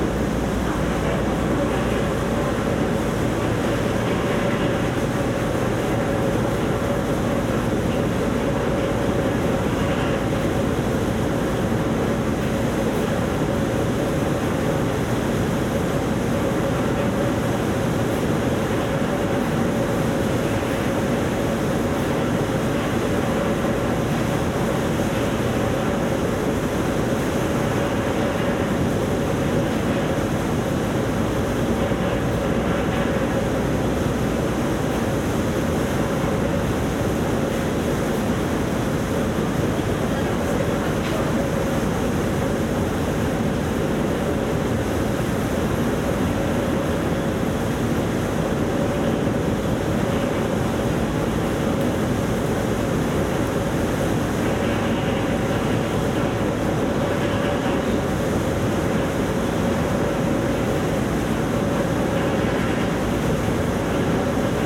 {
  "title": "Place De Brouckère, Bruxelles, Belgique - Escalator",
  "date": "2022-05-04 12:24:00",
  "description": "Tech Note : Ambeo Smart Headset binaural → iPhone, listen with headphones.",
  "latitude": "50.85",
  "longitude": "4.35",
  "altitude": "28",
  "timezone": "Europe/Brussels"
}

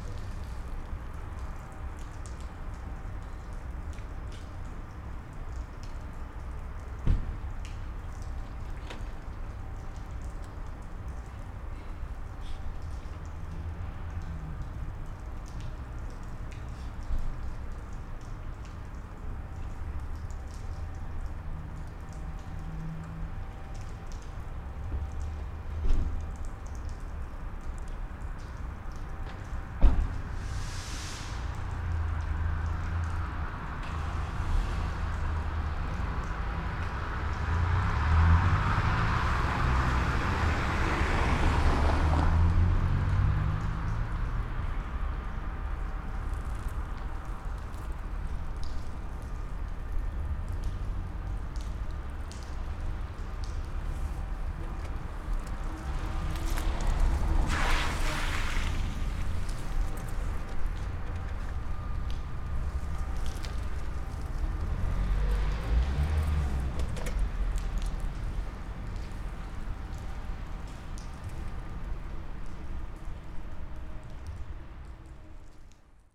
{
  "title": "Binckhorst, Laak, The Netherlands - Dripping water",
  "date": "2011-12-17 16:41:00",
  "description": "water is dripping from its source, the machine that used to be working, noisy, now is disfunctonal, silent. machines farther away are still in motion..\nBinaural recording (dpa4060 into fostex FR2-LE).\nBinckhorst Mapping Project.",
  "latitude": "52.07",
  "longitude": "4.35",
  "altitude": "1",
  "timezone": "Europe/Amsterdam"
}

